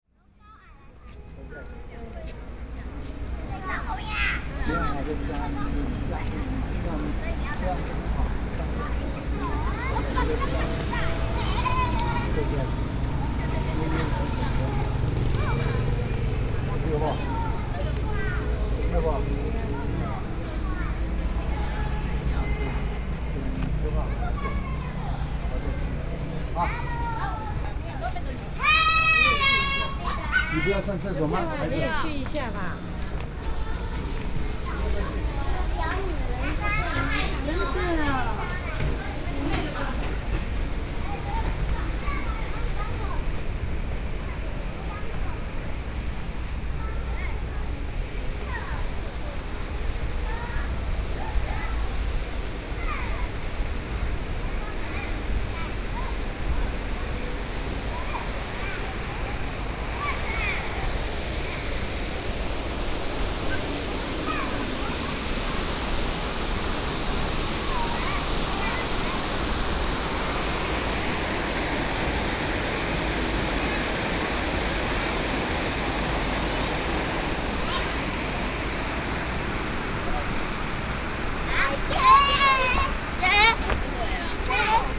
Beitou Library, Taipei City - Soundwalk
walking in the Park, at night, Sony PCM D50 + Soundman OKM II